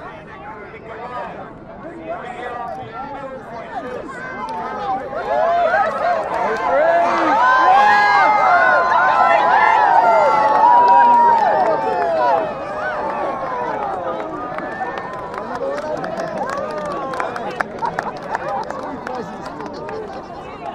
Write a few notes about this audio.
Annual horse races along Karekare Beach front